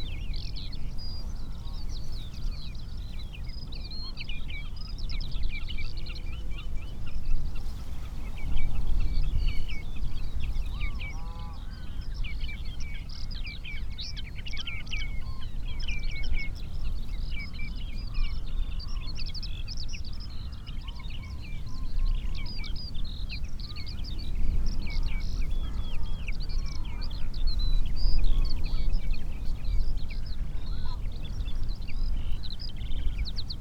Isle of Islay, UK - skylark song and resonating fence wires soundscape ...
Singing skylarks and resonating fence wires soundscape ... bird song and calls from ... snipe ... redshank ... raven ... mute swan ... cuckoo ... crow ... pheasant ... curlew ... jackdaw ... lapwing ... background noise ... windblast ... pushed a SASS in between the bars of a gate to hold it in place ...